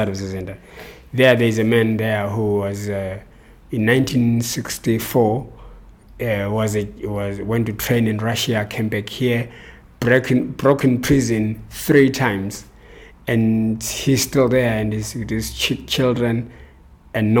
We are sitting with Cont in his little office, stuffed with books, papers and all kinds of archival documents. Cont airs his frustration about an utter lack of archival documentation on Zimbabwe’s history since independence…
Cont Mhlanga is a playwright and the founding director of Amakhosi Cultural Centre in Bulawayo. In the interview Cont also describes how Amakhosi Cultural Centre is and has been responding to the challenges of this environment with educational projects and theatre for the people.
Bulawayo, Zimbabwe